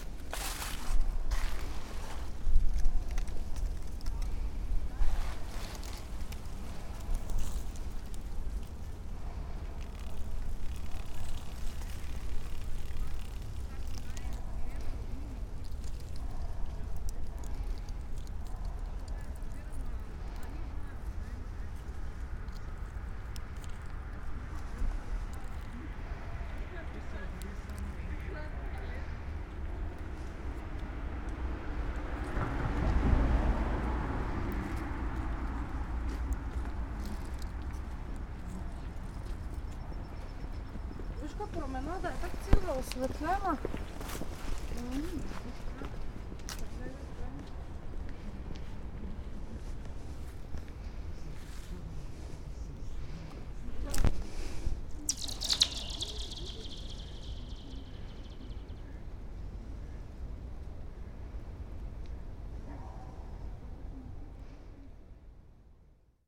pond covered with thin, smooth ice, collecting and throwing small stones on to the surface, passers-by chatting, cars passing